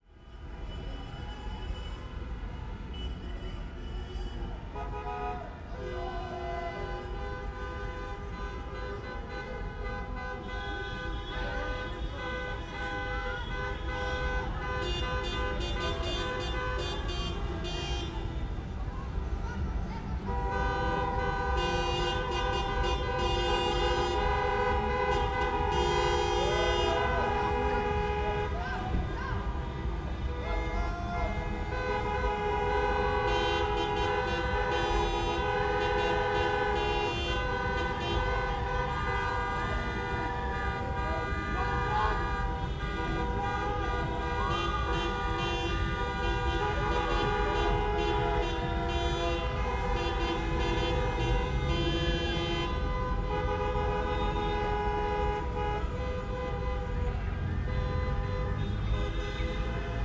Ulm, Deutschland - Crowd Cars Horn Parade World Champion League Football Germany 02
Es ist geschafft. Für die Fußballbegeiterten in Ulm und ebenso der ganzen Welt ein Event des Jahrhunderts. Ich habe es mir selbstverständlich nicht nehmen lassen und bin nach dem Sieg der deutschen Mannschaft in die City Ulms gefahren um den feiernden Menschen zu lauschen. Verrückt, wie die alle gefeiert haben. Vom Justizgebäude aus bis zumBahnhof war alles komplett verstopft, Menschen auf, in, um Autos herum, Auf Autodächern sitzend, Rufen, Schreiend, Hupend. Aufregend !
Aufgenommen wurde mit einem ORTF Setup (MKH8040) in einen Sounddevices 702T recorder
heim@rt - eine klangreise durch das stauferland, helfensteiner land und die region alb-donau